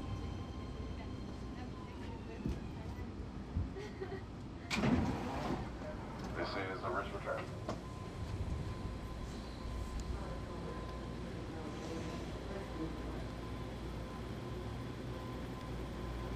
19th street Bart station, downtown Oakland
19th street Bart station, downtown Oakland
Oakland, CA, USA, 20 November 2010, 02:12